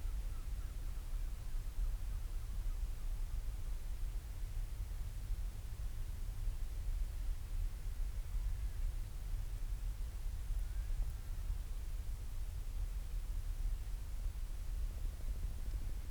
{"title": "Marloes and St. Brides, UK - european storm petrel ...", "date": "2016-05-16 23:40:00", "description": "Skokholm Island Bird Observatory ... storm petrel calls and purrings ... lots of space between the calls ... open lavalier mics clipped to sandwich box on bag ... calm evening ...", "latitude": "51.70", "longitude": "-5.27", "altitude": "34", "timezone": "Europe/London"}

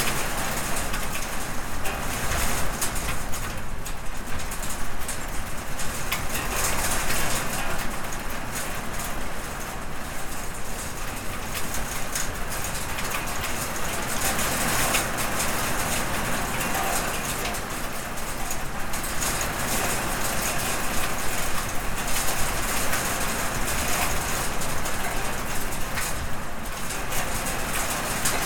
{"title": "Bonang VIC, Australia - HailStormTinRoof", "date": "2017-09-16 15:30:00", "description": "Sudden storm in early spring, hail stones striking an iron roof with metal flues for melody", "latitude": "-37.14", "longitude": "148.72", "altitude": "652", "timezone": "Australia/Melbourne"}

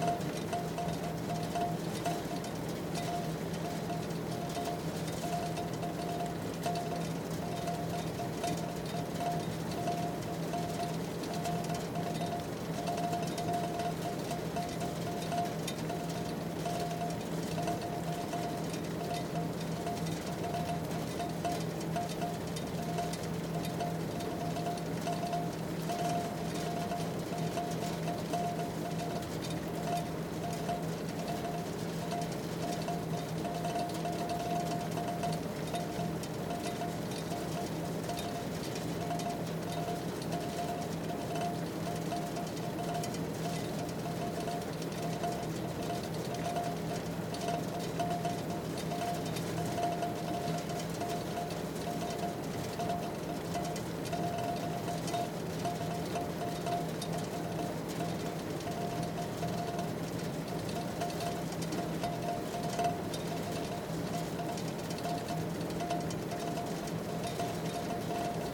{"title": "Pennygillam Industrial Estate, Launceston, Cornwall, UK - Wool being rubbed between boards at the end of the carding process, to prepare a top, ready to spin", "date": "2013-10-17 13:00:00", "description": "This is the sound of wool being prepared for wool-spinning at the Natural Fibre Company. Unlike the worsted-spun yarns, wool-spun yarns are prepared by being carded before being spun. At the end of the massive carding machine, the wool is divided into small sections and then rubbed between boards to produce fine tops. These tops will then be spun and plied to create lovely, bouncy, woollen-spun yarns.", "latitude": "50.63", "longitude": "-4.39", "altitude": "155", "timezone": "Europe/London"}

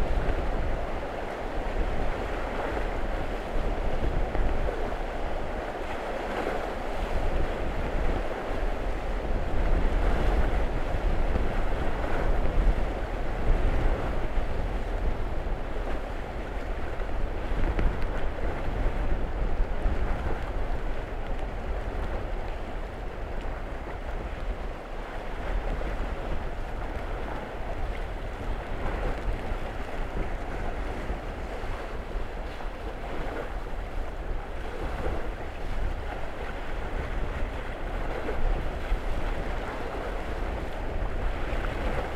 {"title": "Three Pines Rd., Bear Lake, MI - No More Ice", "date": "2016-03-16 13:50:00", "description": "The wind lashes the surface of Bear Lake, whose ice finally went out at some point in the last 12 hours. A noisy end to a mild winter. Water droplets from the churning are heard hitting the custom-built windscreen cage (wire and plastic mesh, foam panels added). Mic itself has three layers of foam/fabric windscreen. Stereo mic (Audio-Technica, AT-822), recorded via Sony MD (MZ-NF810, pre-amp) and Tascam DR-60DmkII.", "latitude": "44.44", "longitude": "-86.16", "altitude": "238", "timezone": "America/Detroit"}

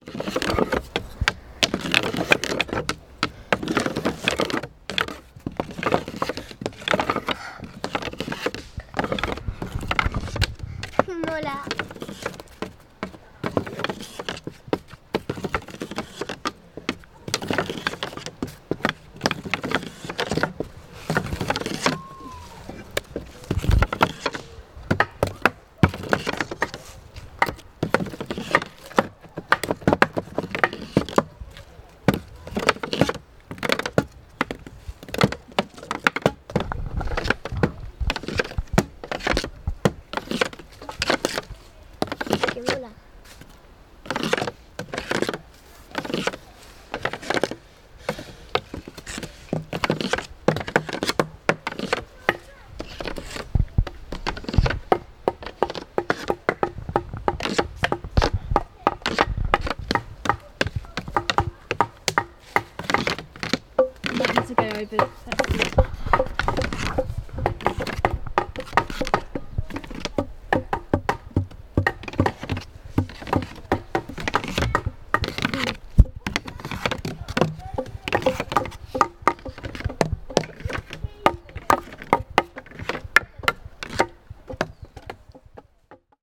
{"title": "Thorncombe Woods, Dorset, UK - Fence Percussion", "date": "2015-08-06 11:45:00", "description": "Children from Dorset Forest School create 'fence percussion' and record the sounds of tapping and scraping a wooden fence with long sticks.\nSounds in Nature workshop run by Gabrielle Fry. Recorded using an H4N Zoom recorder and Rode NTG2 microphone.", "latitude": "50.73", "longitude": "-2.39", "altitude": "107", "timezone": "Europe/London"}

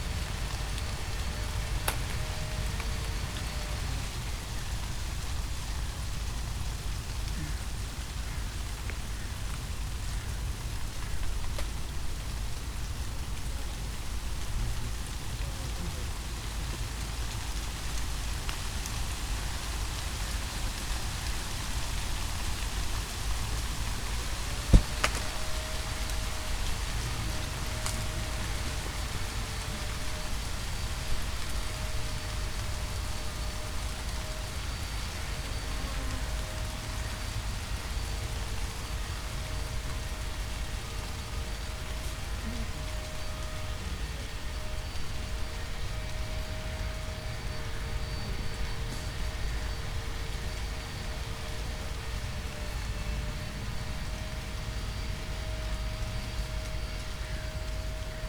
{"title": "schönefeld, großziethen: mauerweg - borderline: berlin wall trail", "date": "2011-10-01 14:16:00", "description": "dry leaves of a bush rustling in the wind, do-it-yourselfer in the distance, a plane crossing the sky\nborderline: october 1, 2011", "latitude": "52.40", "longitude": "13.42", "altitude": "45", "timezone": "Europe/Berlin"}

